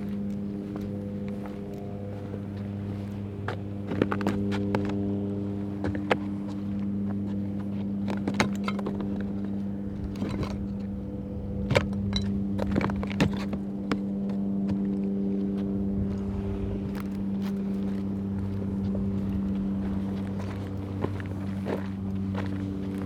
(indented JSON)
{
  "title": "Zabrušany, Česká republika - odkaliště elektrárny Ledvice",
  "date": "2016-08-19 15:37:00",
  "description": "voda s popílkem teče z dlouhých potrubí a odtéka do jezera",
  "latitude": "50.60",
  "longitude": "13.76",
  "altitude": "196",
  "timezone": "Europe/Prague"
}